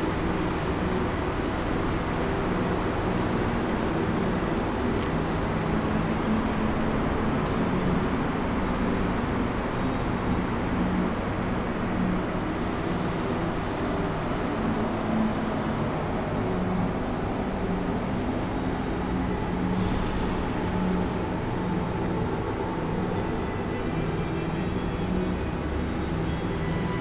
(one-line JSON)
{"title": "essen, old synagogue, main hall", "date": "2011-06-08 22:48:00", "description": "Inside the main hall of the former Essen synagogue, - now museum for jewish culture- the ambience of the place is filled by the outside traffic noise. Within this you can slightly recognize some jewish music texture that is a kind of sound installation in the hall.\nProjekt - Stadtklang//: Hörorte - topographic field recordings and social ambiences", "latitude": "51.46", "longitude": "7.02", "altitude": "76", "timezone": "Europe/Berlin"}